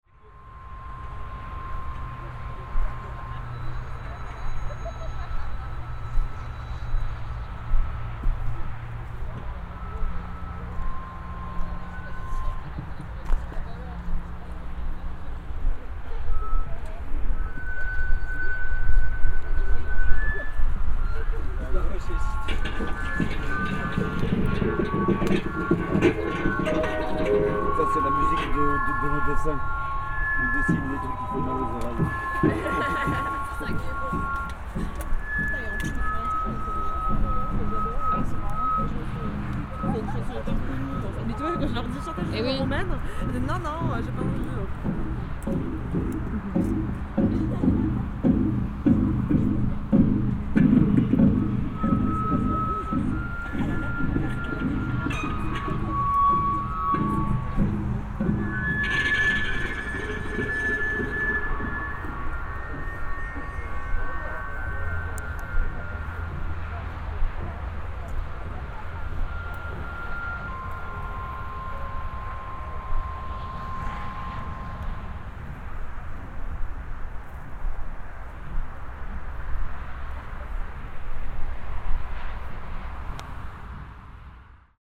an improvised sound installation, Toulouse, France - An improvised sound installation of an artist in this wasteland
I was walking in this waste ground, and I met a sound installation with people talking and sharing this beautiful listening experience. During an exhibition outdoor #Creve Hivernale#
21 December 2017, 21:19